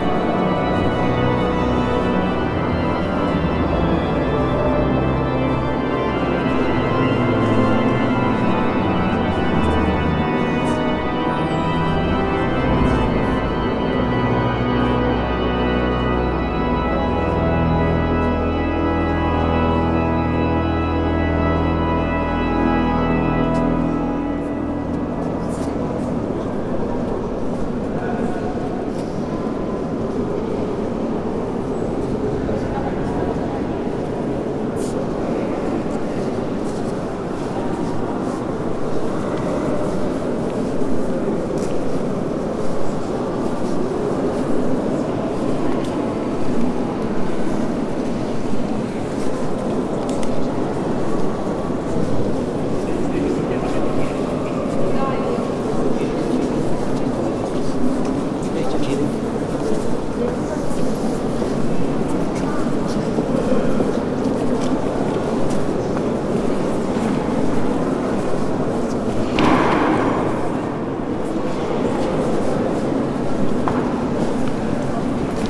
Strasbourg, Place de la Cathedrale, Frankreich - Inside the Cathedral Notre Dame
Inside the cathedrale. The mass on Palm Sunday had just finished, lots of people leaving and entering the church at the same time, the organ is still playing.
Strasbourg, France, 13 April, ~12:00